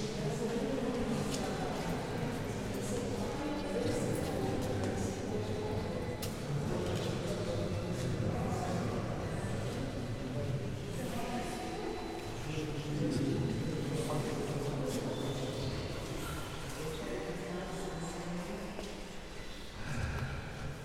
Convento de Crsito in Tomar, main hall chrch, people talking, ressonating in the space. Recorded with a pair of Primo 172 capsules in AB stereo configuration onto a SD mixpre6.